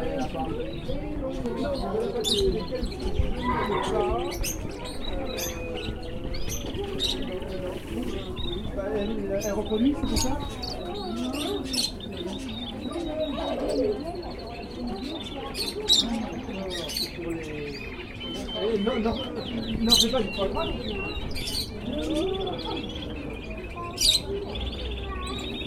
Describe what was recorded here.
Recording made at the weekly Sunday bird market which has been happening at this location since 1808. At the time of recording it was still known as 'Le Marché aux fleurs et aux oiseaux' until it was changed on the 7th June 2014 to 'Le Marché aux fleurs Reine Elizabeth II' after Queen Elizabeth's state visit.